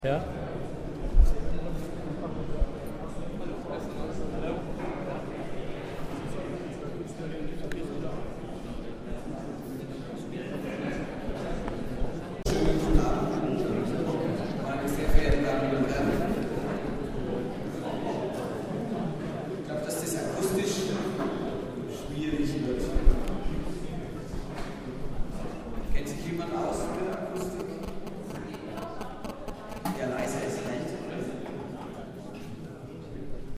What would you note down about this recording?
Mayor of Nürnberg, Dr. Ulrich Maly @ AEG